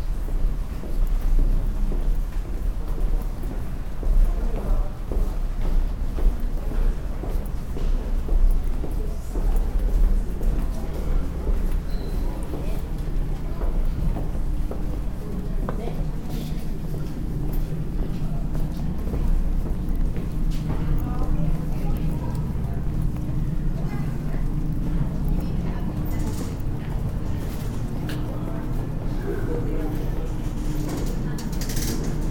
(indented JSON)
{
  "title": "vancouver, harbour site, walk way to sea bus",
  "description": "walking in the gang way to the sea bus station - footsteps, talks and a street musician playing the obligatory pan pipe\nsoundmap international\nsocial ambiences/ listen to the people - in & outdoor nearfield recordings",
  "latitude": "49.29",
  "longitude": "-123.11",
  "altitude": "7",
  "timezone": "GMT+1"
}